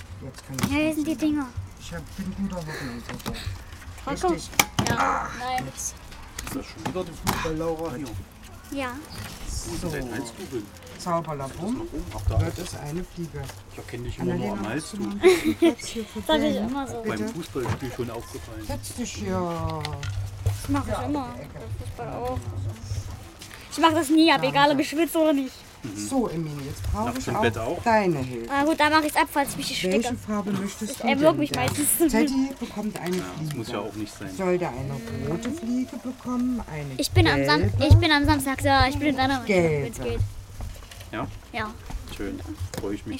gotha, kjz big palais, im pavillon - donnerbuddys basteln
im außenpavillon am kinder- und jugendzentrum big palais beim basteln mit kleinen kindern. wir basteln donnerbuddys (zum film ted). kinderstimmen, betreuer, verkehr, passanten.